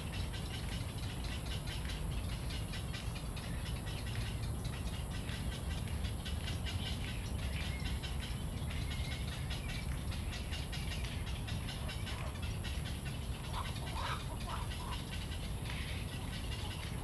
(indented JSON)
{
  "title": "大安森林公園, 大安區 Taipei City - Bird calls",
  "date": "2015-06-28 19:47:00",
  "description": "Bird calls, in the Park, Traffic noise, Ecological pool\nZoom H2n MS+XY",
  "latitude": "25.03",
  "longitude": "121.54",
  "altitude": "8",
  "timezone": "Asia/Taipei"
}